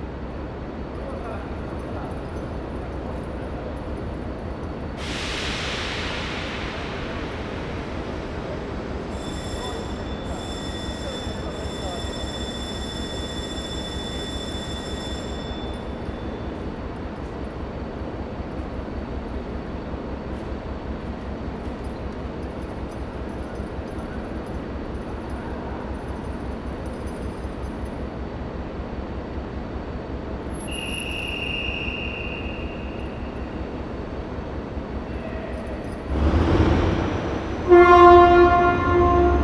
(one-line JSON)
{"title": "Paraguay, Montevideo, Departamento de Montevideo, Uruguay - Montevideo - Uruguay - Estación Central General Artigas", "date": "2000-10-18 14:00:00", "description": "Montevideo - Uruguay\nEstación Central General Artigas\nAmbiance départ d'un train", "latitude": "-34.90", "longitude": "-56.19", "altitude": "14", "timezone": "America/Montevideo"}